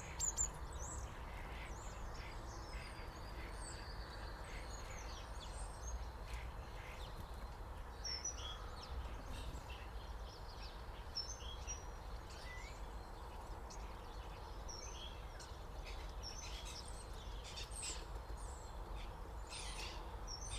Harp Meadow Lane Colchester - Garden Birds at Home, Christmas Day.
Recorded using Mixpre6 and USI Pro, Blue-tits and Sparrows, maybe a robin or two that seem to gravitate towards a certain bush in the garden. I clipped the microphones onto the bush, and tried to capture not only their calls but also their wingbeats.